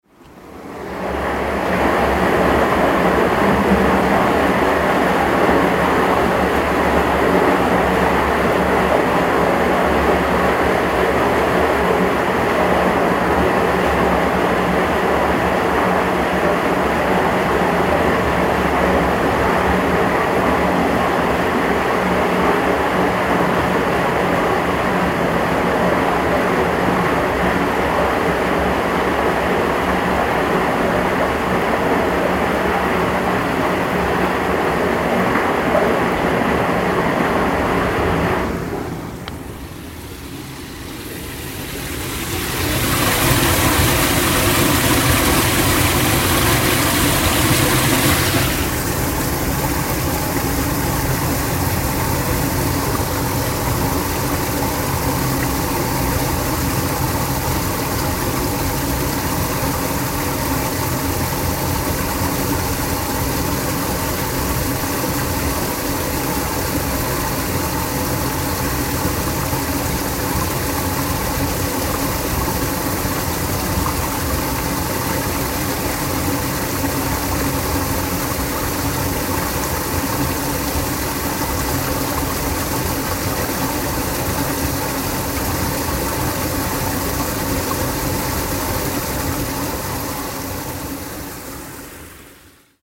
koeln, street after heavy rain - koeln, street gutters after heavy rain
recorded june 22nd, 2008, around 10 p. m.
project: "hasenbrot - a private sound diary"
Cologne, Germany